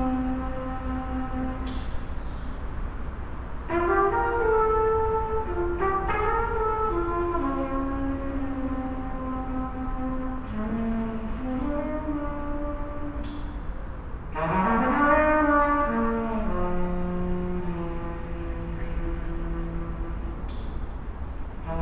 tivoli park, ljubljana - trumpeter, with cigarette in his hand
railway and road underpass, trumpeter plays his music ... unfortunately he stopped, curious to know, whats in my hand and on my head ...
Ljubljana, Slovenia, January 2014